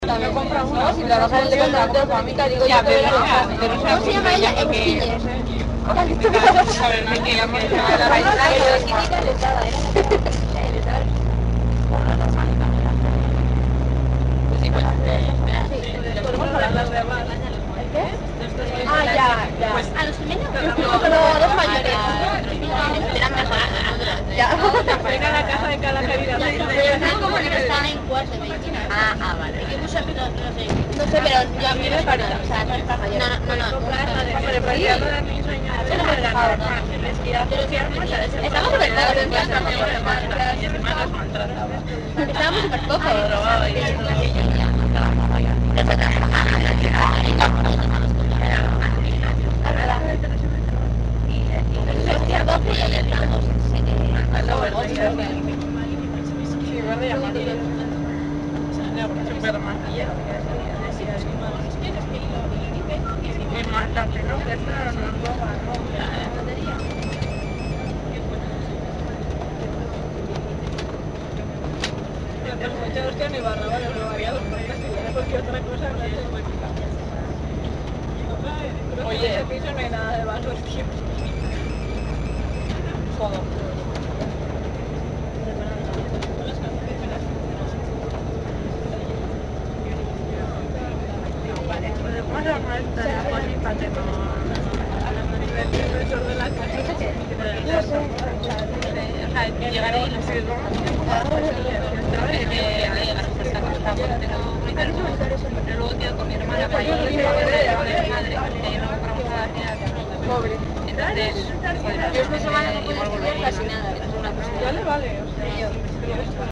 {
  "title": "Leioa (basque country)",
  "description": "2009/3/12. 8:48 a.m. Avanzada of Leioa. The annoying environment in the bus to the university.",
  "latitude": "43.32",
  "longitude": "-2.97",
  "altitude": "14",
  "timezone": "Europe/Berlin"
}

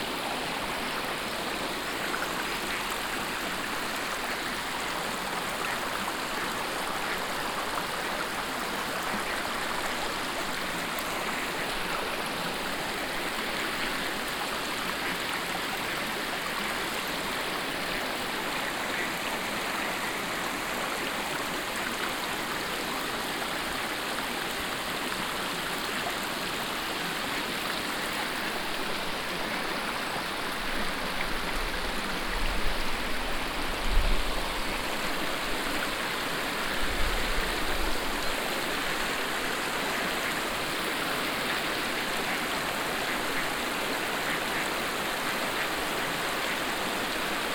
{
  "title": "vianden, old river fortification",
  "date": "2011-08-09 22:05:00",
  "description": "Standing inside the river Our at an old river fortification, that is now rebuild to allow the fish to swim the river upwards again.\nVianden, alte Flussbefestigung\nStehend im Fluss Our an einem alten Flusswehr, der jetzt wieder umgebaut wird, um den Fischen zu ermöglichen, flussaufwärts zu schwimmen.\nVianden, vieille fortification de la rivière\nDebout dans la rivière Our, sur une ancienne fortification qui a été reconstruite pour permettre aux poissons de remonter le cours de la rivière.",
  "latitude": "49.93",
  "longitude": "6.22",
  "altitude": "207",
  "timezone": "Europe/Luxembourg"
}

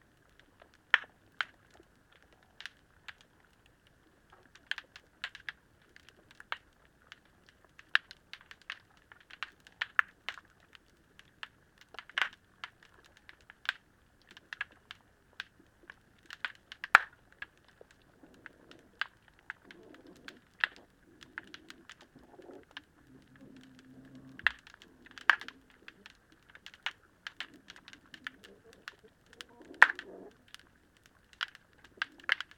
{
  "title": "Rethymno, Crete, old harbour underwater",
  "date": "2019-04-29 14:30:00",
  "description": "hydrophone in old harbour waters",
  "latitude": "35.37",
  "longitude": "24.48",
  "altitude": "2",
  "timezone": "Europe/Athens"
}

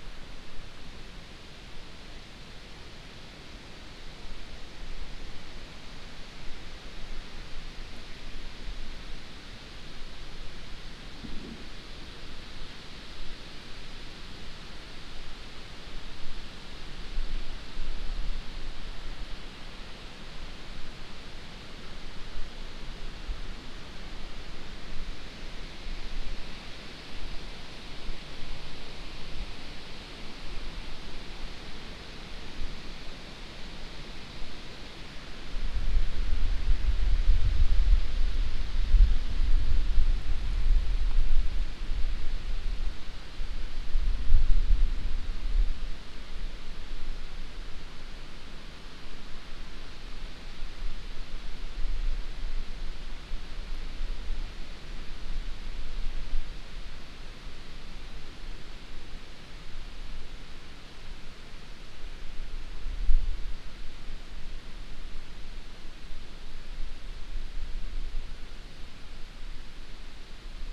{
  "title": "Aukštadvario seniūnija, Litauen - Lithuania, countryside, devils hole",
  "date": "2015-07-05 19:20:00",
  "description": "In the centre of a small but quite deep round valley entitled \"devil's hole\" that is surrounded by trees. The quiet sounds of leaves in the wind waves, insects and birds resonating in the somehow magical circle form.\ninternational sound ambiences - topographic field recordings and social ambiences",
  "latitude": "54.62",
  "longitude": "24.65",
  "altitude": "164",
  "timezone": "Europe/Vilnius"
}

